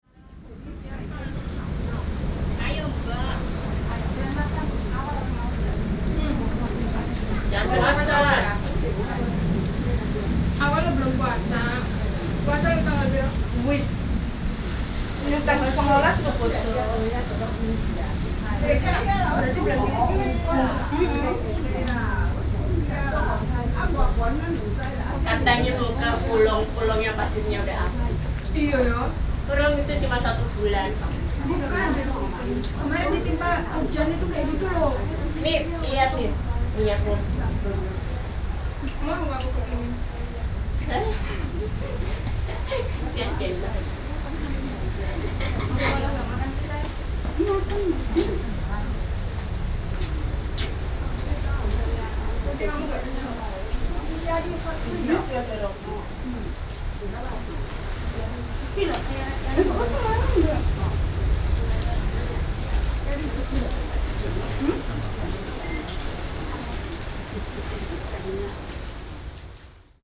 tuman, Keelung - Tourist
A group of tourists of different nationalities in the pavilion to rest, Sony PCM D50 + Soundman OKM II